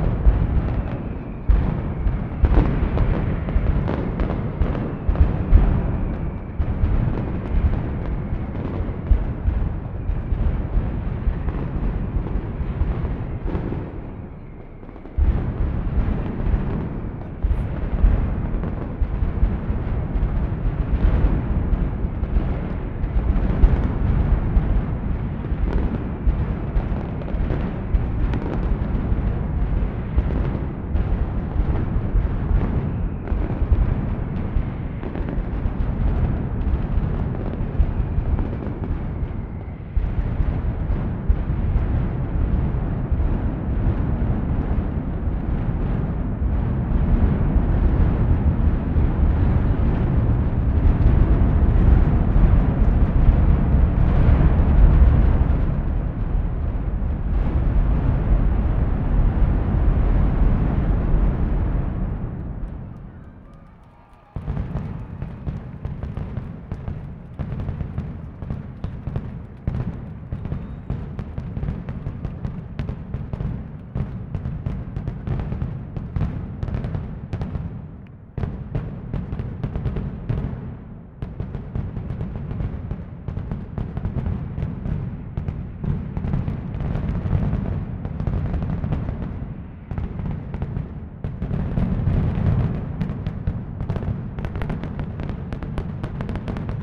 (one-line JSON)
{"title": "Sant Francesc, València, Valencia, Spain - fireworks before las fallas", "date": "2016-03-10 14:00:00", "description": "every day before the big event \"las fallas\" there s a fireworks with a certain sound choreography....", "latitude": "39.47", "longitude": "-0.38", "altitude": "22", "timezone": "Europe/Madrid"}